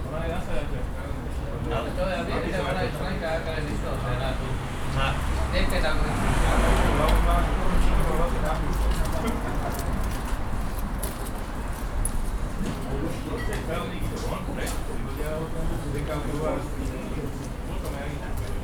Bratislava, Market at Zilinska Street - Refreshment joint